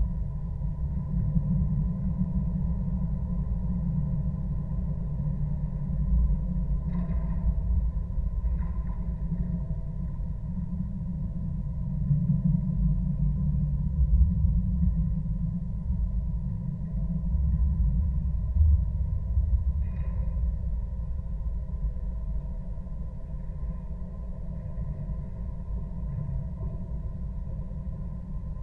Las Palmas, Gran Canaria, support wire
contact microphones on bus station's support wire
Las Palmas de Gran Canaria, Las Palmas, Spain, 24 January, 21:40